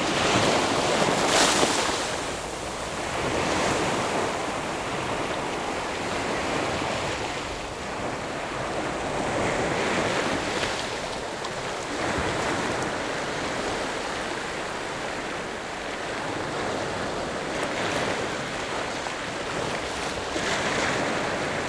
Shimen, New Taipei City - The sound of the waves
桃園縣 (Taoyuan County), 中華民國